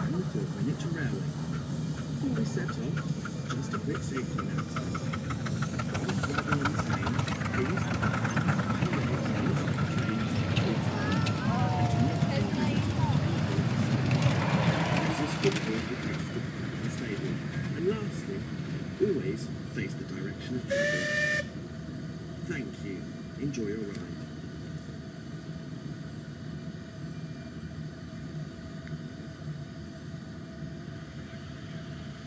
Amnerfield Railway, Amners Farm, Burghfield, UK - Miniature steam trains
This is the sound of the completely charming miniature railway at Amners Farm. A tiny bell signals for trains to go, and then miniature engines, waiting in the sidings, head off with a tiny toot-toot. The engines are powerful enough to power two little cars on which the public can sit, and the driver sits in front of the tiny train, shoveling tiny pieces of coal into the tiny fire that powers the tiny engine.
Reading, UK, 6 May 2017